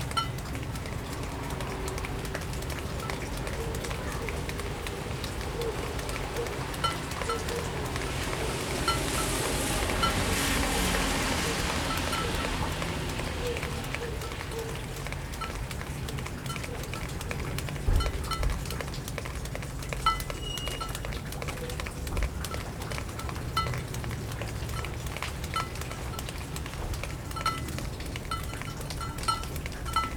Suffex Green Lane, GA - Water Dripping After Downpour
A recording made on a gloomy, miserable day. You can hear water dripping from the roof of the apartment and dripping into a large puddle near a rain gutter. Some of the water also hits a metal container kept near the side of the house, which produces a characteristic "clink" sound. Recorded on a patio with Tascam Dr-22WL.